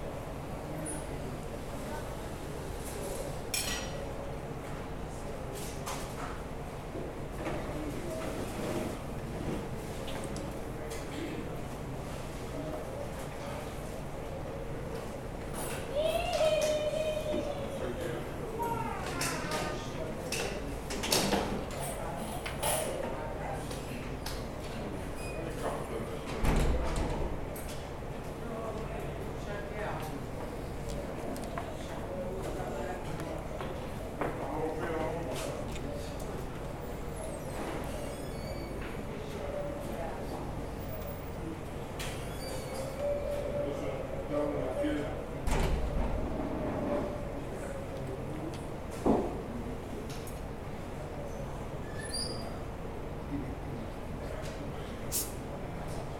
Frank Sinatra Restaurant at the Hebrew University
Jerusalem